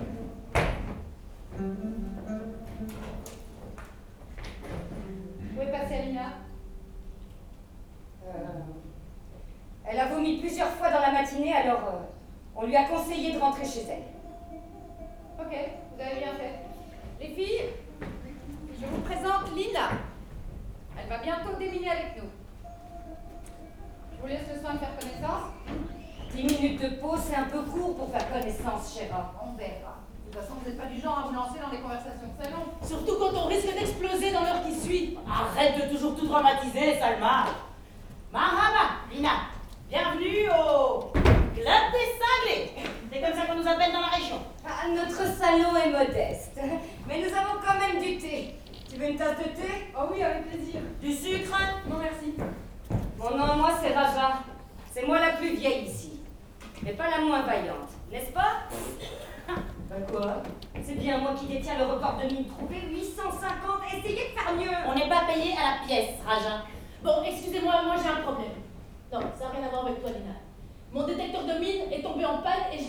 Centre, Ottignies-Louvain-la-Neuve, Belgique - Jean Vilar theater
This recording is the beginning of a theatrical performance. This is the true story of four women doing the minesweeper in Lebanon. As this theater is important in local life, it was essential to include it in the Louvain-La-Neuve sonic map. The short sound of music is free. Theater administratives helped me in aim to record this short moment. The real name of the drama is : Les démineuses.
Ottignies-Louvain-la-Neuve, Belgium, 24 March